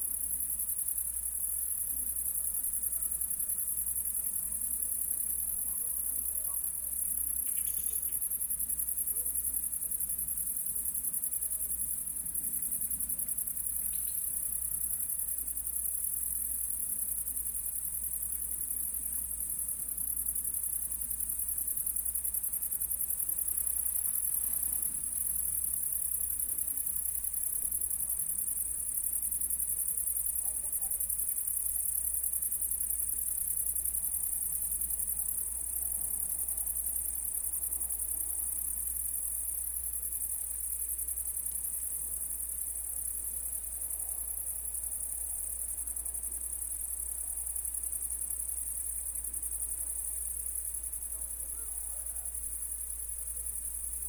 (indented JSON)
{
  "title": "Oigny, France - Locusts",
  "date": "2017-07-29 21:00:00",
  "description": "This evening we will sleep outside, in a hot pasture near an old church. Locusts and crickets are singing into the grass and the brambles.",
  "latitude": "47.57",
  "longitude": "4.71",
  "altitude": "378",
  "timezone": "Europe/Paris"
}